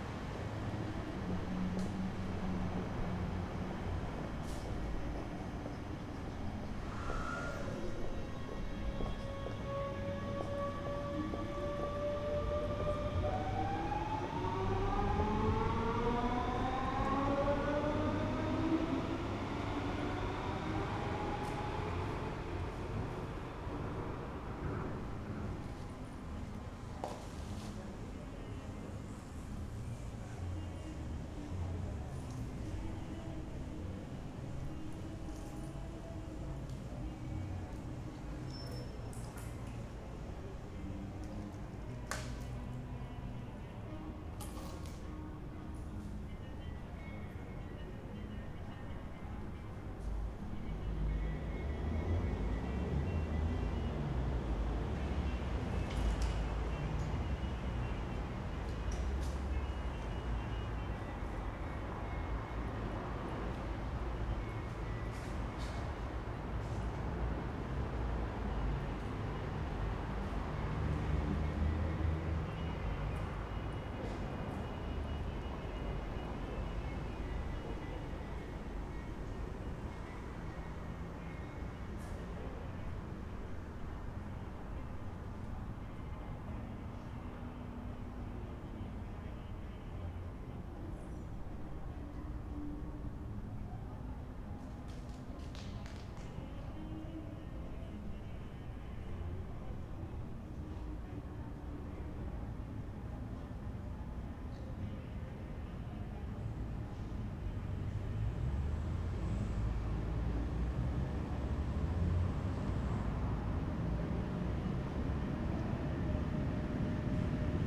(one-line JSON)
{"title": "Köln, Hans-Böckler-Platz", "date": "2011-09-20 22:25:00", "description": "night ambience, pedestrians, bikers, cars and trains", "latitude": "50.94", "longitude": "6.93", "altitude": "54", "timezone": "Europe/Berlin"}